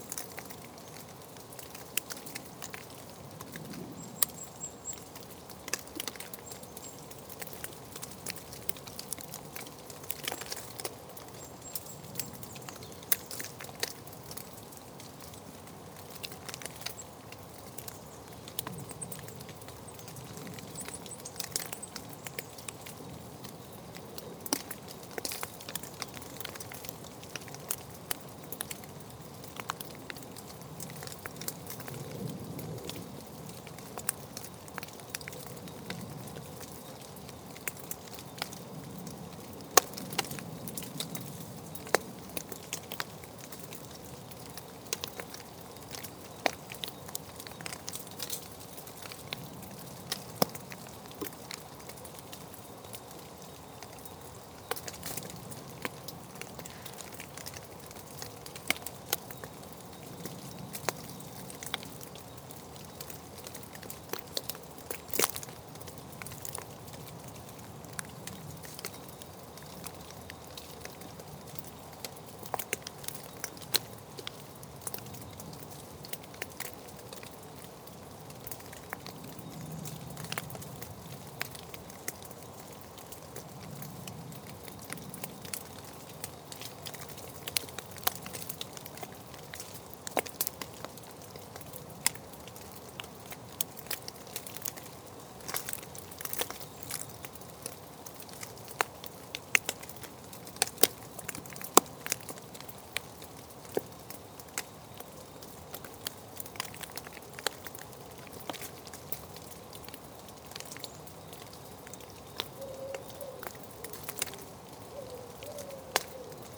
At the end of the day, the snow is melting below a majestic beech tree. Recorder hidden in a hole, into the tree, and abandoned alone.
Very discreet : Long-tailed Tit, Common Wood Pigeon, European Green Woodpecker.